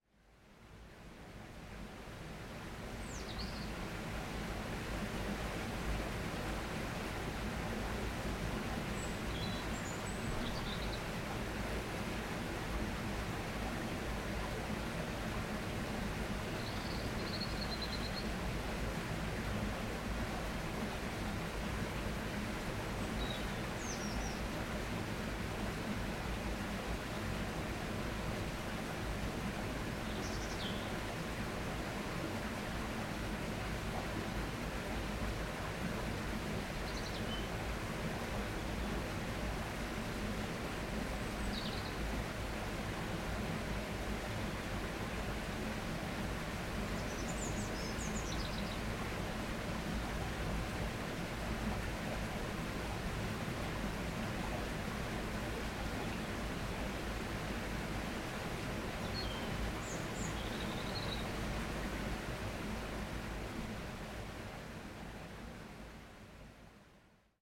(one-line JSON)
{"title": "Vallée des Traouiero, Trégastel, France - Small river in the forest [Valley Traouïero]", "date": "2019-04-22 17:35:00", "description": "Après-midi. Le long de la Vallée des Traouïero, la cascade d'un ruisseau, des oiseaux.\nAfternoon. Along the Valley Traouïero, the waterfall of a stream, birds.\nApril 2019.", "latitude": "48.82", "longitude": "-3.49", "altitude": "20", "timezone": "Europe/Paris"}